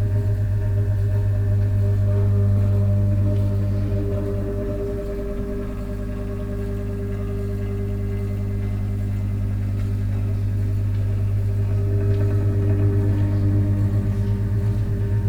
Lörick, Düsseldorf, Deutschland - Düsseldorf, Wevelinghoferstr, kybernetic op art objects
The sound of kybernetic op art objects of the private collection of Lutz Dresen. Here no.03 a small box with a moving metal form - here with voices in the background
soundmap nrw - topographic field recordings, social ambiences and art places